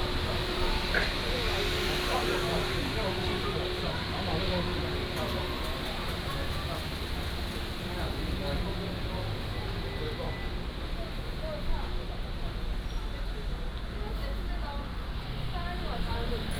Da'an Rd., Da'an Dist. - Market
Market, Go through a lot of small restaurants
Taipei City, Taiwan, 4 June 2015